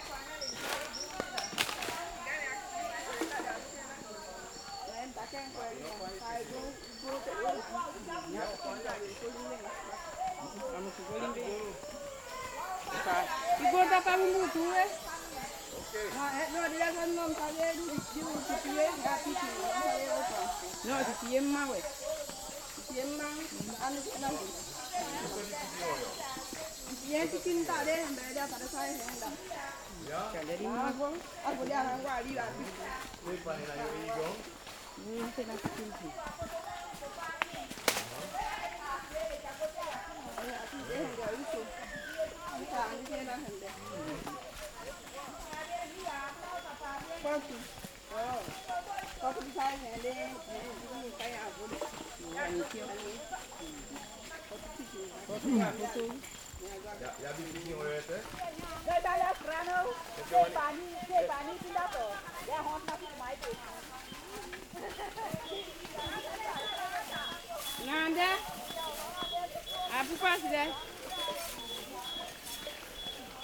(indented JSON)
{
  "title": "Kamaloea, Suriname - maisakriki - women walking to their fields",
  "date": "2000-05-08 08:06:00",
  "description": "maisakriki - women walking to their fields. They are educated in better ways to grow crops and save the forest (instead of slash and burn)",
  "latitude": "4.21",
  "longitude": "-55.44",
  "altitude": "87",
  "timezone": "America/Paramaribo"
}